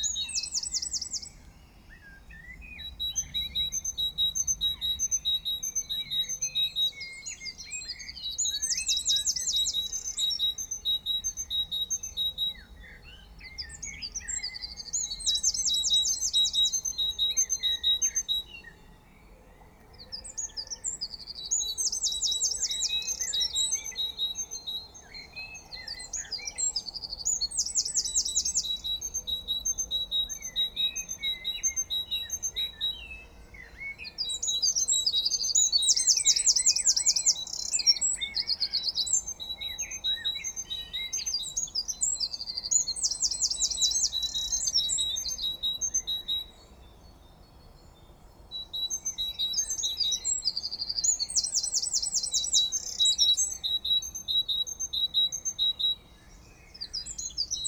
Mont-Saint-Guibert, Belgique - Birds on the early morning
This morning, birds were singing loud. It's spring and everybody of this small world is dredging. It was a beautiful song so I took the recorder before to go to work.